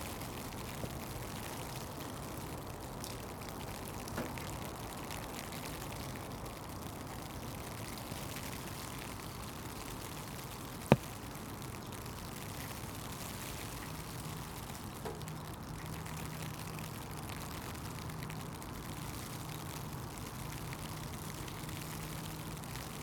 The Poplars High Street Hawthorn Road Back High Street West Avenue Ivy Road
At the back of a car park
behind a church
one car
Unseen
a dunnock sings from undergrowth
Woodpigeons display on the rooftops
the male’s deep bow and tail lift
People walk along the street
looking ahead most don't see me
one man does he gives me a thumbs up